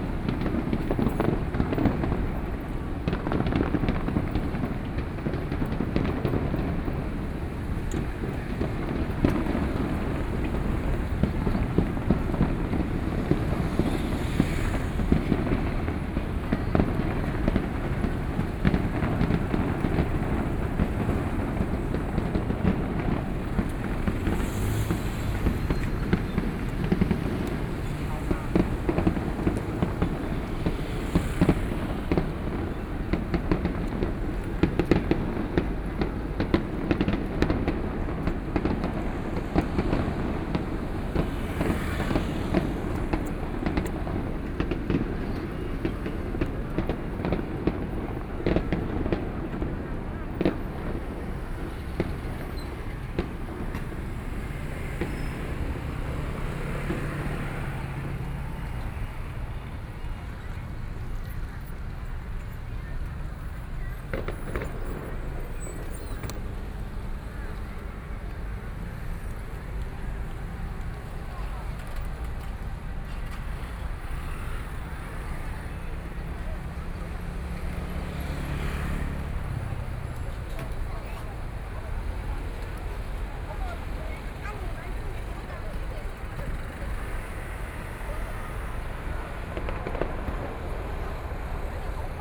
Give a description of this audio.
Traffic Sound, Walking towards market orientation, Fireworks sound, Traditional temple festivals, Sony PCM D50+ Soundman OKM II